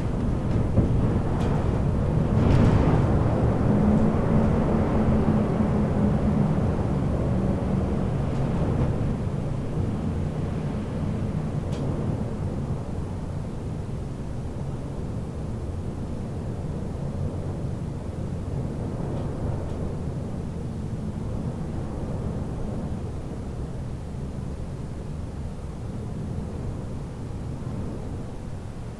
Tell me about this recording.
night. I awoke. there was snowy storm outside